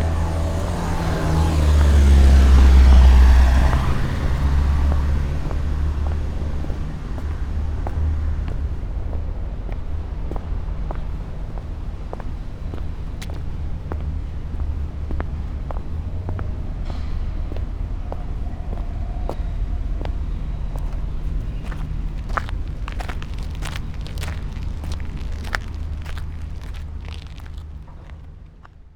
Rolandufer, Berlin, Germany - walking
Sonopoetic paths Berlin